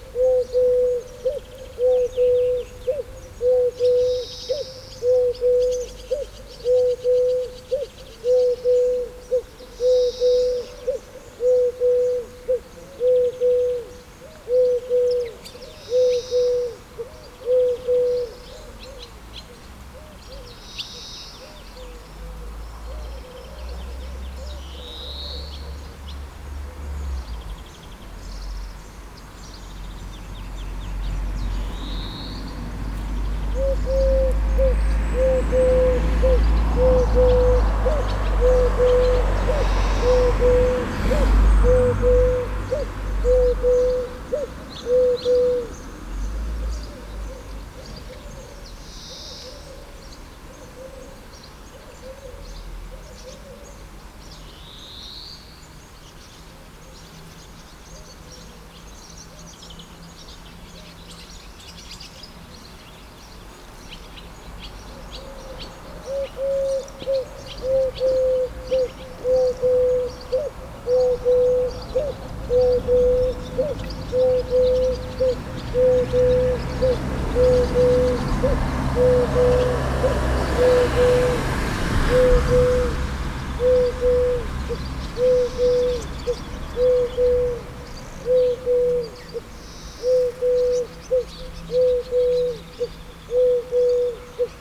Chamesson, France - Turtledoves
In this small village of the Burgundy area, we are in a very old wash-house. Just near, turtledoves are singing a very throbbing vocal. Around, swallows are moving fast and singing on an electric wire.